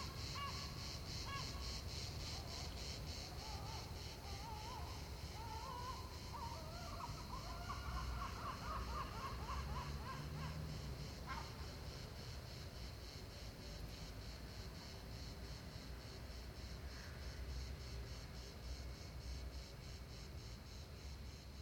Mnt Gilloux, Marseille, France - Marseille - Petit matin au Roucas-Blanc
Marseille
Petit matin au Roucas blanc - ambiance estivale
France métropolitaine, France